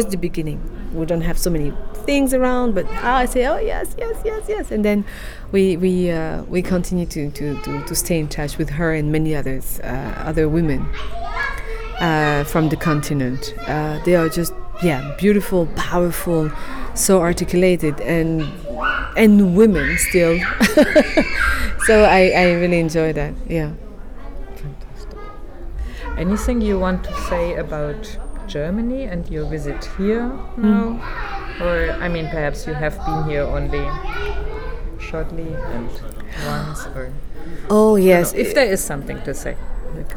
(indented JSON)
{
  "title": "City Library, Hamm, Germany - Learning together as artists in Africa… and now here in Germany…",
  "date": "2014-06-16 16:23:00",
  "description": "Carole talks about her encounter with African women artists in South Africa, Kenya, Zambia, Zimbabwe… learning from each other and from history. She adds the story, of how they got to be at the Children’s Theatre Festival in Hamm now and her fist encounters with young audiences here…\nCarole’s entire footage interview is archived here:",
  "latitude": "51.68",
  "longitude": "7.81",
  "altitude": "66",
  "timezone": "Europe/Berlin"
}